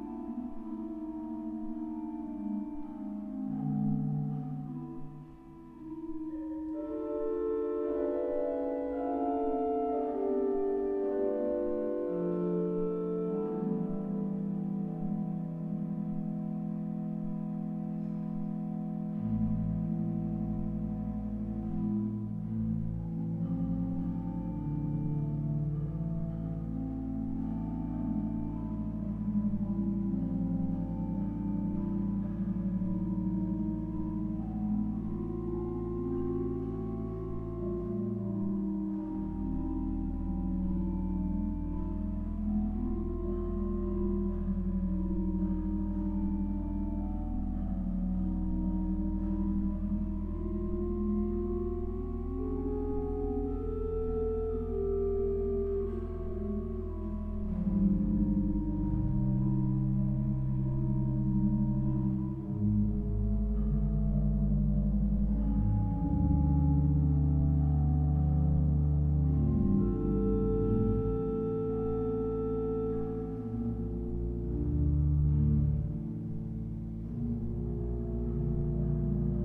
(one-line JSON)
{"title": "Höchst, Frankfurt, Germany - organ practice", "date": "2012-09-06 14:58:00", "description": "organ practice for concert on the following Sunday, after the opening of EAST meets WEST", "latitude": "50.10", "longitude": "8.54", "altitude": "107", "timezone": "Europe/Berlin"}